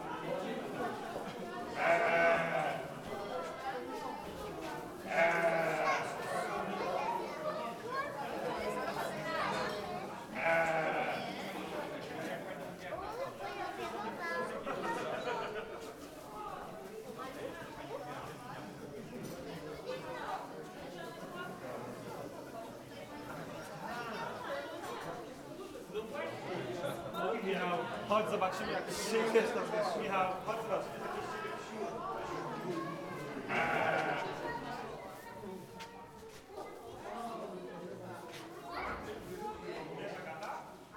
Szreniawa, National Museum of Farming, barn - visitors among farm animals
visitors walk and talk around the barn looking at pigs, goats, chicken, rabbits and other small farm animals.